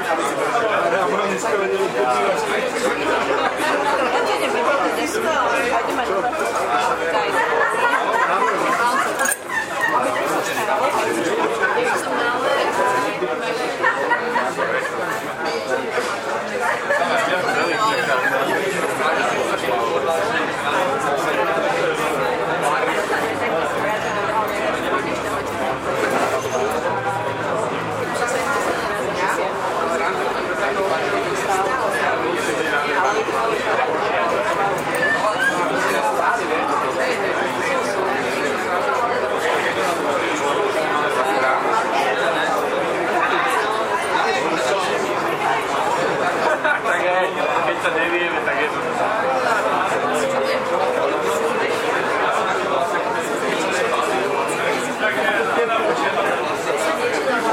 Staré Mesto, Slovenská republika, YMCA - nightlife in YMCA building